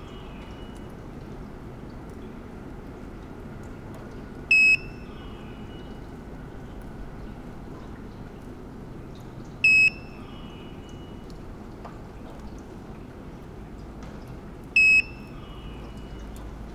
Gr.Märkerstr., Halle (Saale), Deutschland - silent street, communicating devices
A silent street on a rainy and cold Monday evening. Devices with unclear funtion seem to communicate, it looks like a locking system. After 2min it triples its frequency
(Sony PCM D50, internal mics)
Halle (Saale), Germany